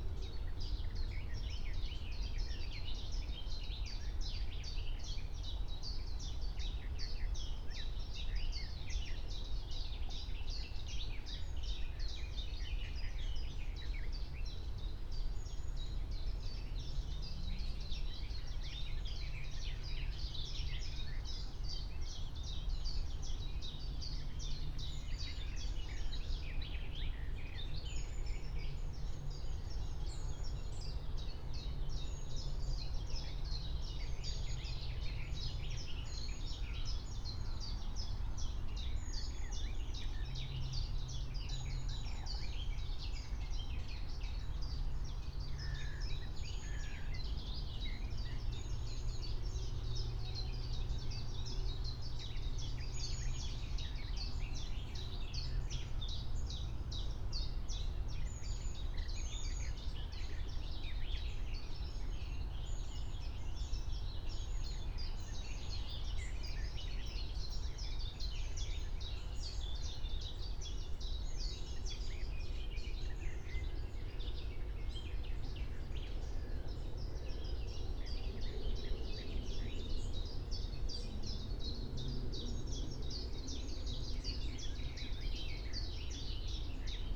04:30 Berlin, Wuhletal - Wuhleteich, wetland
Deutschland, 17 June 2021, 04:30